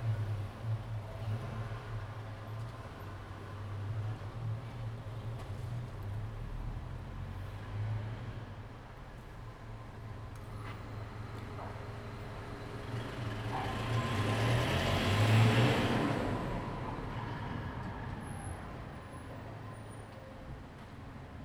瓊林聚落, Jinhu Township - Old building settlements
Birds singing, Traffic Sound, Old building settlements
Zoom H2n MS+XY